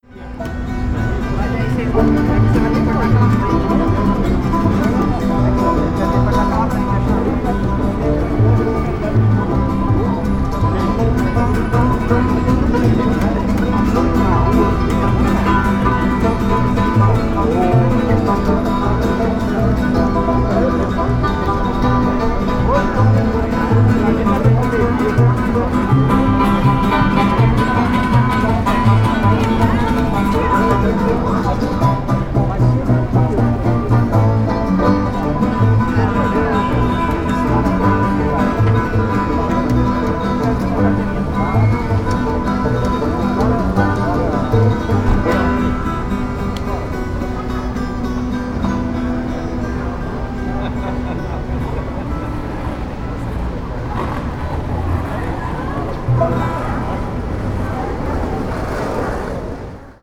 {"title": "Calçadão de Londrina: Banda Them Old Crap - Banda Them Old Crap / Them Old Crap band", "date": "2016-04-16 11:49:00", "description": "Panorama sonoro: banda londrinense Them Old Crap se apresentando nas proximidades da Praça Marechal Floriano Peixoto. Os músicos tocavam instrumentos acústicos, como violão, baixo e banjo. Diversas pessoas transitavam pelas proximidades da banda, sendo que muitas paravam para acompanhar a apresentação e contribuíam com algum dinheiro.\nSound panorama: londoner band Them Old Crap performing in the vicinity of Marechal Floriano Peixoto Square. The musicians played acoustic instruments, such as guitar, bass and banjo. Several people passed by the band, often stopping to accompany the presentation and contributed some money.", "latitude": "-23.31", "longitude": "-51.16", "altitude": "612", "timezone": "America/Sao_Paulo"}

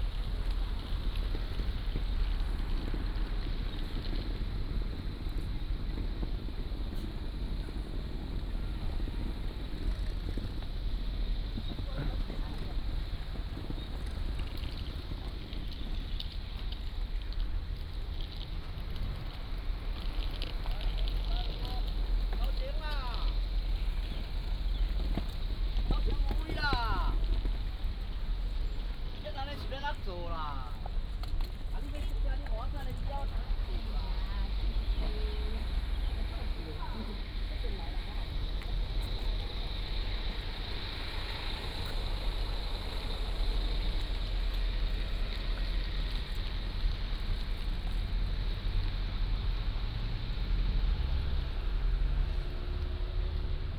{"title": "富岡港, Taitung City - Walking in the dock", "date": "2014-10-31 15:27:00", "description": "In the dock, Walking in the dock", "latitude": "22.79", "longitude": "121.19", "altitude": "3", "timezone": "Asia/Taipei"}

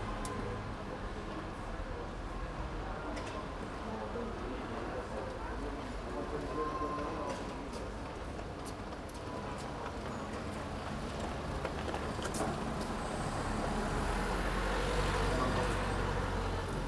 {"title": "Rue de La Jonquiere, Paris - Le Voltigeur Cafe", "date": "2019-05-22 11:40:00", "description": "Sitting outside the cafe, DR40 resting on an ash tray, with the on-board mics capturing the bustle of people passing.", "latitude": "48.89", "longitude": "2.32", "altitude": "38", "timezone": "Europe/Paris"}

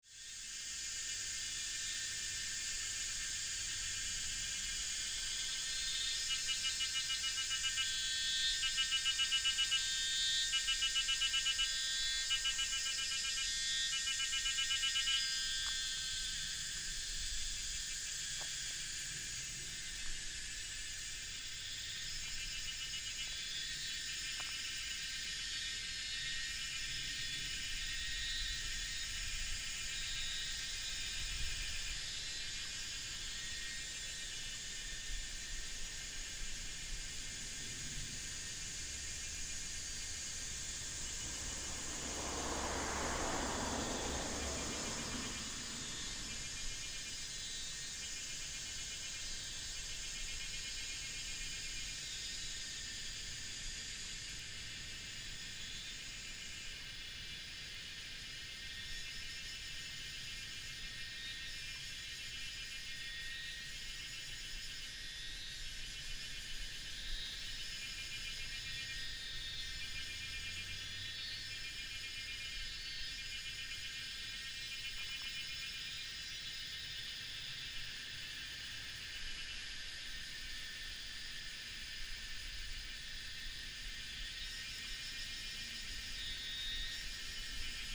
{"title": "大溪區環湖公路, Taoyuan City - Cicada cry", "date": "2017-08-09 18:17:00", "description": "Cicada cry, Traffic sound, aircraft", "latitude": "24.82", "longitude": "121.29", "altitude": "289", "timezone": "Asia/Taipei"}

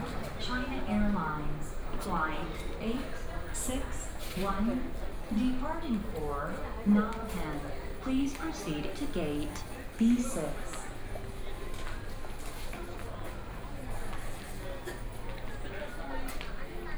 {"title": "Taoyuan International Airport, Taiwan - At the airport", "date": "2014-05-06 06:51:00", "description": "At the airport", "latitude": "25.08", "longitude": "121.24", "altitude": "28", "timezone": "Asia/Taipei"}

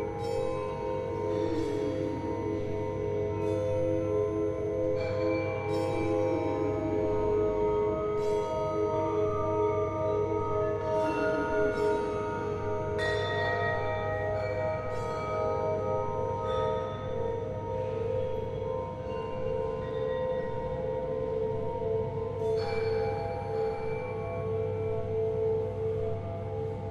{"title": "Museun of Music, sound performance", "date": "2007-05-03 20:08:00", "description": "A fragment of the recordings of a sound performance for 15 lydes, 7 pitchforks and two vacuumcleaners, performed in the hall of Museum of Czech Music in Karmelitská and continuing as a marching band between the Museum and the building of Academy of Performing Arts on Malostranské náměstí. The event was part of the program of a exhibition Orbis Pictus. The Lyde is designed by Dan Senn. the group of music amateurs gathered just before the performance and we played the instruments standing on two floors of the ambits of the main hall of the space a former church. The acoustics provided for the improvised music a resonant environment.", "latitude": "50.08", "longitude": "14.40", "altitude": "203", "timezone": "Europe/Prague"}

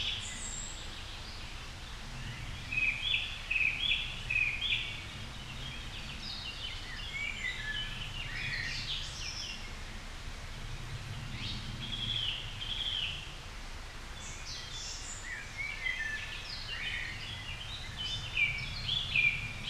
{"title": "Grgar, Grgar, Slovenia - Near stream Slatna", "date": "2020-06-20 07:25:00", "description": "Birds in the forest. Recorded with Sounddevices MixPre3 II and LOM Uši Pro.", "latitude": "46.00", "longitude": "13.66", "altitude": "334", "timezone": "Europe/Ljubljana"}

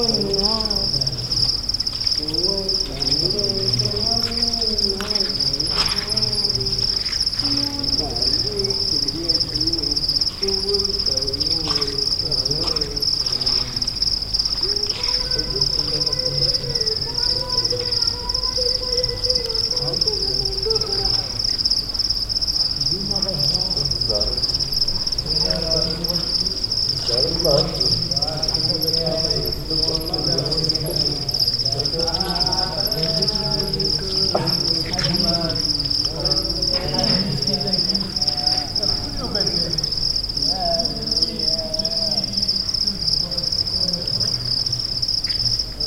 {"title": "Tafraout, near Hotel Redouane, riverside", "date": "2006-09-06 20:01:00", "description": "Africa, Morocco, Tafraout", "latitude": "29.72", "longitude": "-8.97", "altitude": "999", "timezone": "Africa/Casablanca"}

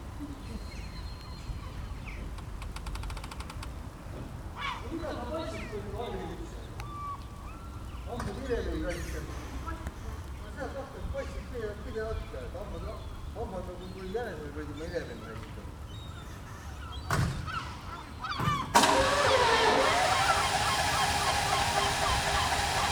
{"title": "Tallinn, Oismae - motor pump", "date": "2011-07-09 15:40:00", "description": "tallinn, oismae, man has trouble to activate a pump in order to empty a chemical toilet", "latitude": "59.42", "longitude": "24.64", "altitude": "16", "timezone": "Europe/Tallinn"}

